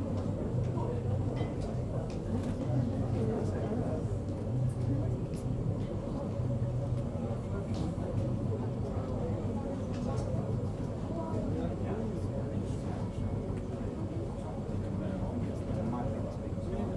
Fernsehturm observation floor, Panoramastraße, Berlin, Germany - Fernsehturm quiet observation floor at the top
In the observation floor there is a total disconnect between what is seen and what is heard. All ones attention is on the panorama of Berlin outside, but thick layers of glass mean that all you hear is from inside. Bland music plays from the bar, wine glasses sometimes chink, visitors murmur in low voices pointing at the city, clothing swishes. The atmosphere is rather subdued. Everyone is concentrating on the spectacular view.